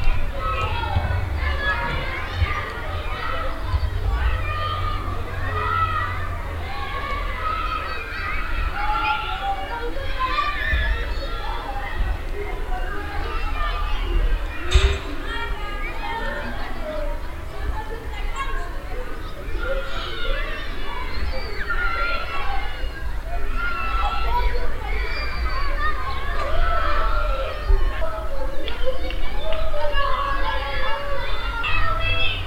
hosingen, school yard
At a school yard at the end of the first morning intermission. Groups of school kids running around and finally gather at the school entrance.
Hosingen, Schulhof
Auf einem Schulhof am Ende der ersten Großen Pause. Gruppen von Schulkindern rennen umher und versammeln sich schließlich am Schuleingang.
Hosingen, cour de l'école
Dans la cour de l’école à la fin de la première récréation de la matinée. Un groupe d’écoliers court dans toutes les directions avant de se rassembler à l’entrée de l’école
Project - Klangraum Our - topographic field recordings, sound objects and social ambiences
Hosingen, Luxembourg, 11 July 2011, 12:40